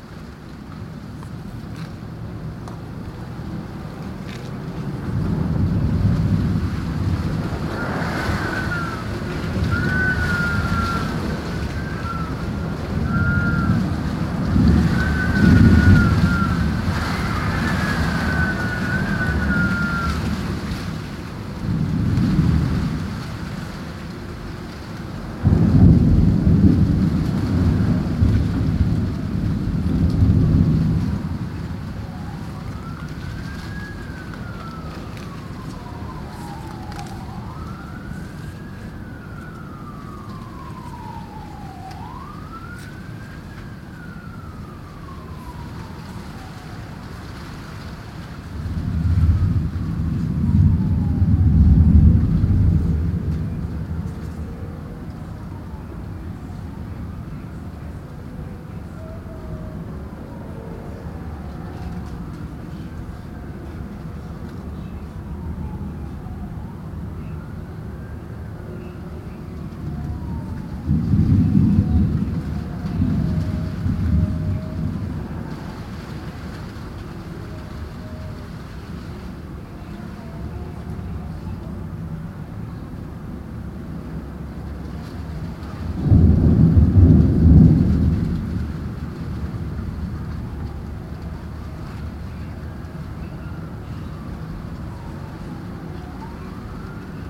{"title": "Lorong Cenderawasih, Pantai Peringgit, Melaka, Malaysia - Thunder", "date": "2017-11-16 18:40:00", "description": "Listening to rumbling thunder in the room and the recorder is facing the window. Strong wind and it is making the leaves knocking the window glass. In the background is the tv sound and the busy road nearby.", "latitude": "2.23", "longitude": "102.26", "altitude": "8", "timezone": "Asia/Kuala_Lumpur"}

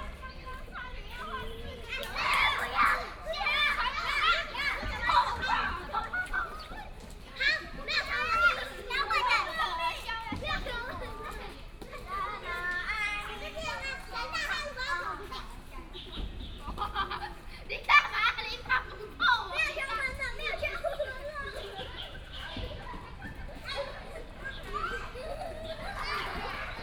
{"title": "Huashun St., Zhonghe Dist. - Children and birds sound", "date": "2017-04-30 17:26:00", "description": "in the Park, sound of the birds, traffic sound, Child", "latitude": "25.00", "longitude": "121.47", "altitude": "19", "timezone": "Asia/Taipei"}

